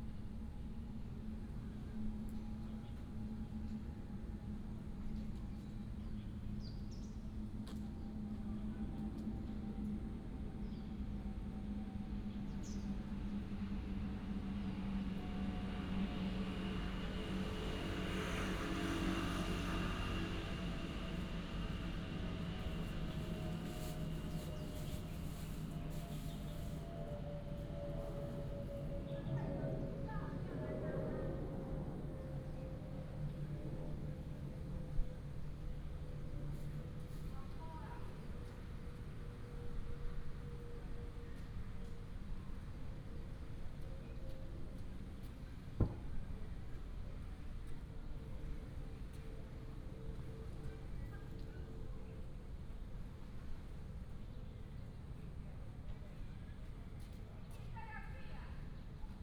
普慶公園, Zhongli Dist., Taoyuan City - in the Park
in the Park, Traffic sound, Birds and Dog, The plane passed by, Binaural recordings, Sony PCM D100+ Soundman OKM II